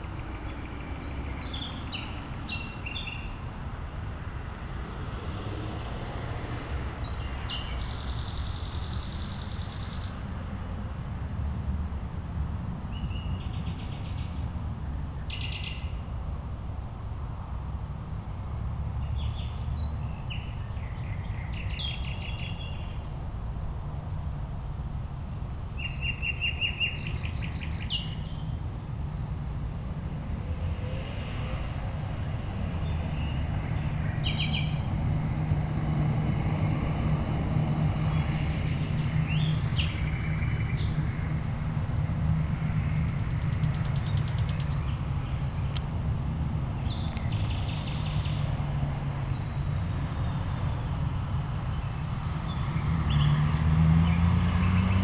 Großer Tiergarten, am sowjetischen Ehrenmal, Berlin, Germany - 3 nachtigallen am sowjetischen ehrenmal, tiergarten
3 nachtigallen (17 augenblicke des fruehlings)
3 nightingales (17 moments of spring)